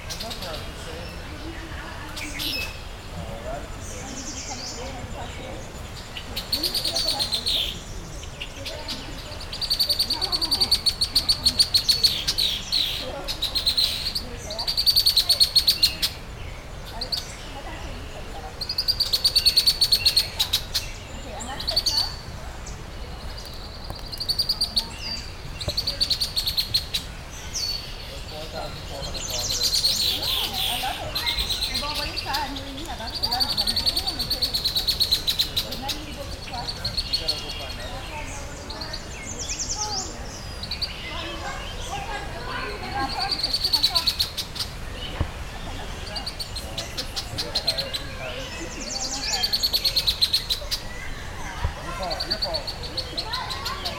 The object that can be seen at this location is a sign (approx. 150cm height) depicting the number 42, designating the geographical north latitude at which it is placed. Birds are a variety of warblers, thrushes, red-winged blackbirds, etc. returning on their Spring migration.
Zoom H6 w/ MS stereo mic head.